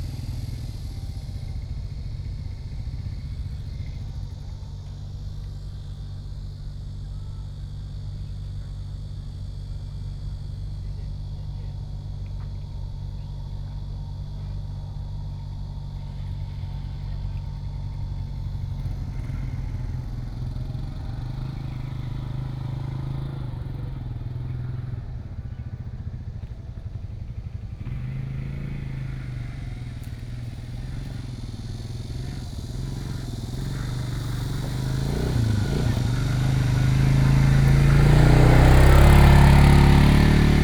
頭城鎮港口里, Yilan County - next to the beach
In the woods next to the beach, Cicadas sound, Sound of the waves, Very hot weather, Traffic Sound